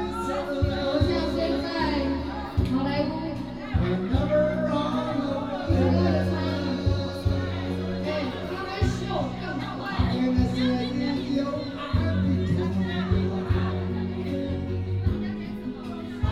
{
  "title": "金峰鄉公所正興村, Jinfeng Township - At a tribal party",
  "date": "2018-04-05 19:01:00",
  "description": "At a tribal party, Paiwan people",
  "latitude": "22.60",
  "longitude": "121.00",
  "altitude": "45",
  "timezone": "Asia/Taipei"
}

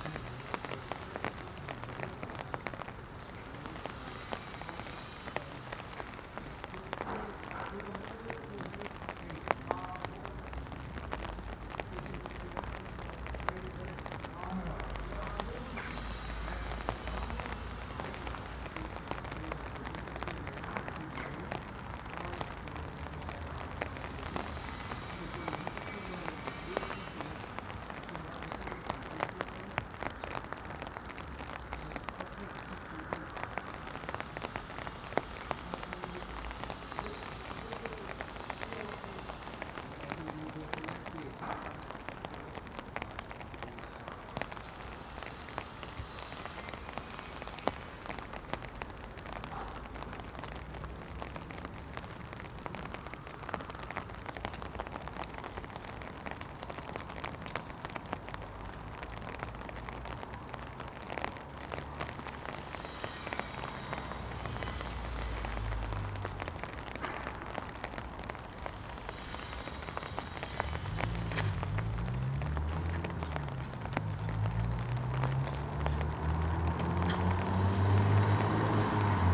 {
  "title": "Contención Island Day 15 inner east - Walking to the sounds of Contención Island Day 15 Tuesday January 19th",
  "date": "2021-01-19 10:36:00",
  "description": "The Drive Moor Crescent Moorfield Ilford Road\nTwo men with hi-viz stripes\nwork on the platforms\nAcross the track a dunnock\npicks its way through the shrubbery\nThe outbound train has nine passengers\na decreased service",
  "latitude": "55.00",
  "longitude": "-1.61",
  "altitude": "63",
  "timezone": "Europe/London"
}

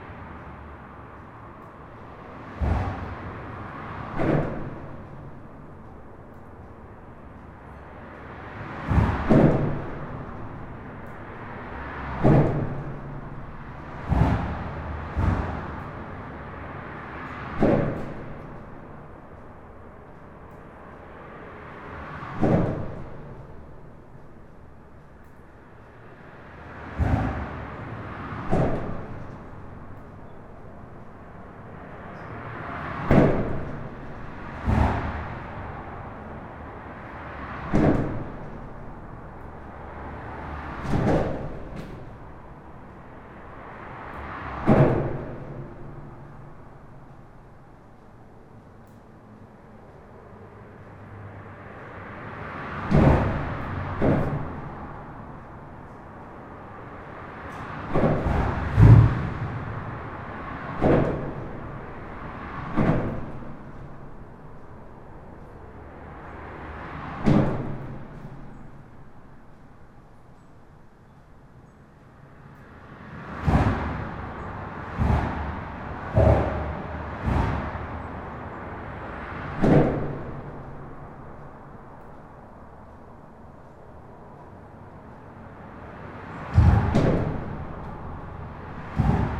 {
  "title": "Camon, France - Inside the bridge",
  "date": "2017-11-05 12:50:00",
  "description": "Inside the Jules Verne bridge. Its clearly not the best viaduct, sound is quite basic as its a concrete bridge and not a steel bridge, but ok, simply I was here... The Jules Verne is a major bridge, enormous concrete bulk, crossing swamps and the Somme river. As its not steel, the concrete absorbs resonance.",
  "latitude": "49.89",
  "longitude": "2.37",
  "altitude": "40",
  "timezone": "Europe/Paris"
}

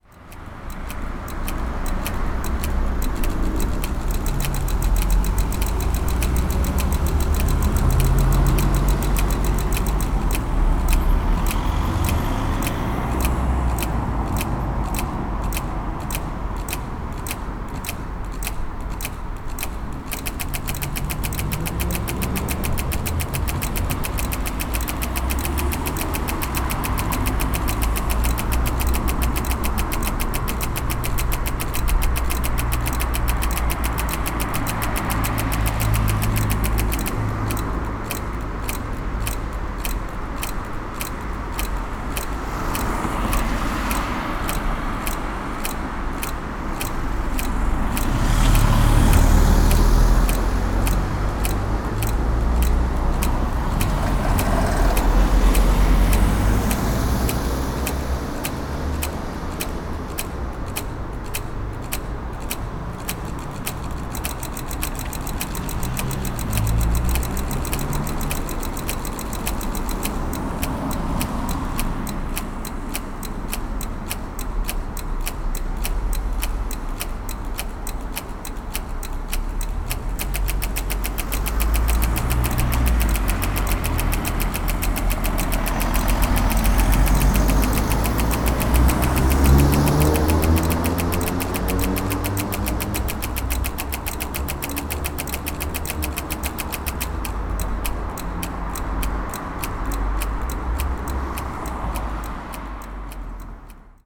{
  "title": "Tvrdého-Úvoz crossing",
  "date": "2011-04-29 22:35:00",
  "description": "crossing with clicking sounds of the lamps. however its night, there is a lot of traffic.",
  "latitude": "49.20",
  "longitude": "16.59",
  "altitude": "243",
  "timezone": "Europe/Prague"
}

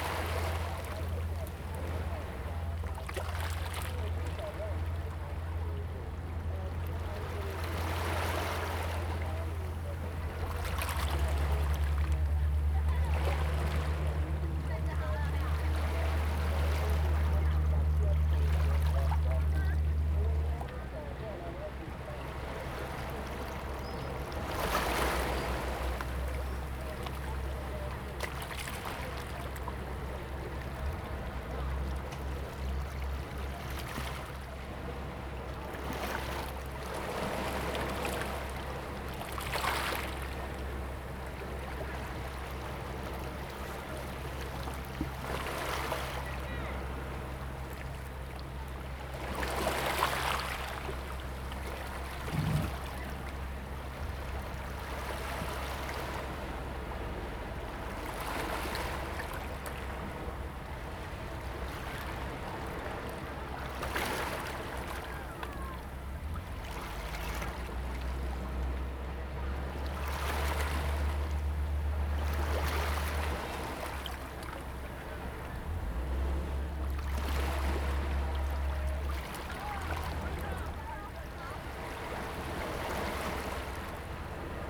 {
  "title": "中澳沙灘, Hsiao Liouciou Island - At the beach",
  "date": "2014-11-01 16:37:00",
  "description": "At the beach, Sound of the waves, Sightseeing boats on the sea\nZoom H2n MS+XY",
  "latitude": "22.35",
  "longitude": "120.39",
  "timezone": "Asia/Taipei"
}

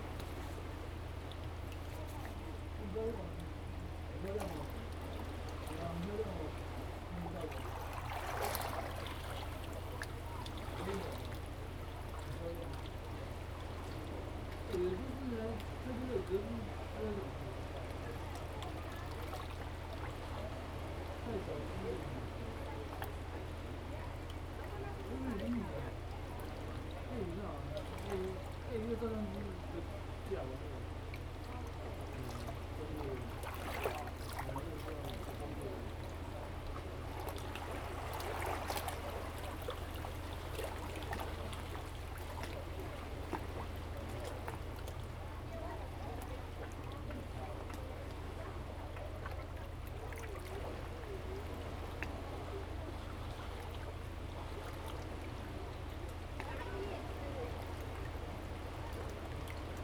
{
  "title": "杉福村, Hsiao Liouciou Island - Sound wave",
  "date": "2014-11-01 12:08:00",
  "description": "Sound wave, below the big rock\nZoom H2n MS +XY",
  "latitude": "22.34",
  "longitude": "120.36",
  "altitude": "12",
  "timezone": "Asia/Taipei"
}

